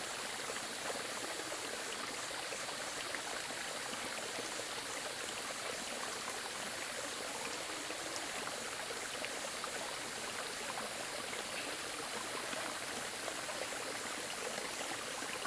華龍巷, 種瓜坑溪, 南投縣 - stream
Cicada sounds, stream, Headwaters of the river
Zoom H2n MS+XY